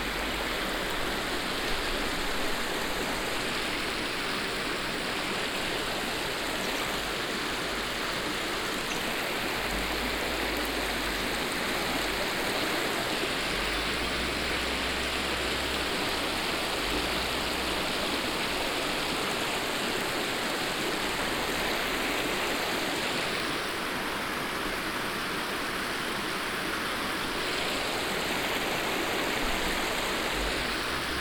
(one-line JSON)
{"date": "2011-07-11 16:07:00", "description": "The border river Our here recorded under a bridge on a warm summer evening.\nUntereisenbach, Our\nDer Grenzfluss Our hier aufgenommen unter einer Brücke an einem warmen Sommerabend.\nUntereisenbach, Our\nLa rivière frontalière Our enregistrée ici sous un pont, un chaud soir d’hiver.\nProject - Klangraum Our - topographic field recordings, sound objects and social ambiences", "latitude": "50.00", "longitude": "6.15", "timezone": "Europe/Berlin"}